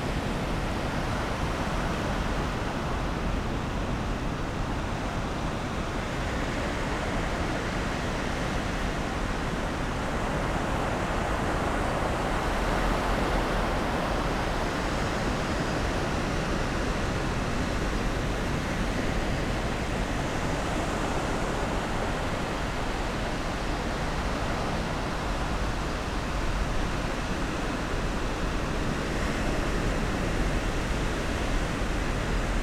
Scarborough Lifeboat, Foreshore Rd, Scarborough, UK - Falling tide ...
Falling tide ... on the slip way of the RNLI station ... lavalier mics clipped to bag ...